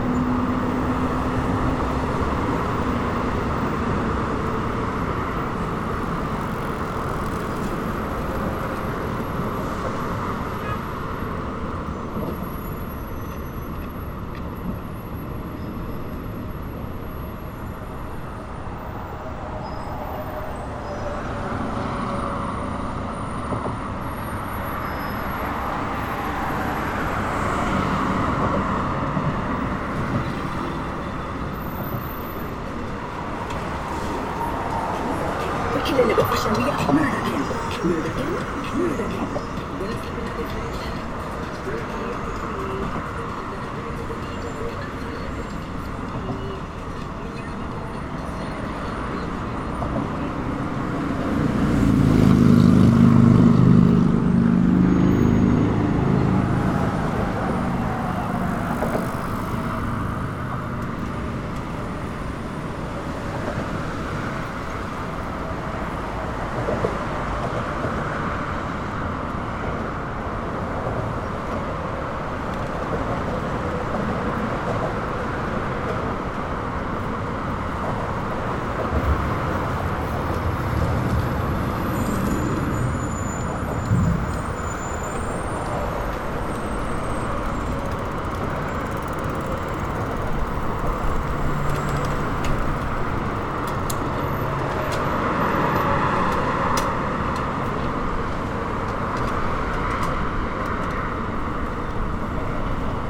Williamsburg Bridge Path, New York, NY, USA - Williamsburg Bridge Path
Recorded at the Williamsburg Bridge Path.
Zoom H6
9 August